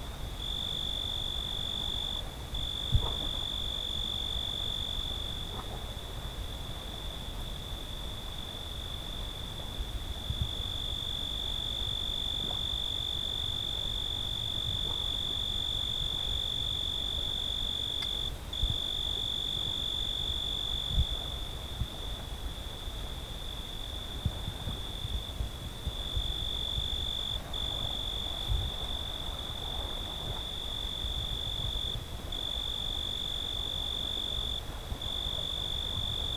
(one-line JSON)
{"title": "Riserva Naturale dello Zingaro, San Vito Lo Capo TP, Italia [hatoriyumi] - Paesaggio estivo notturno", "date": "2012-07-01 22:25:00", "description": "Paesaggio estivo notturno con cicale, grilli e insetti", "latitude": "38.09", "longitude": "12.80", "altitude": "94", "timezone": "Europe/Rome"}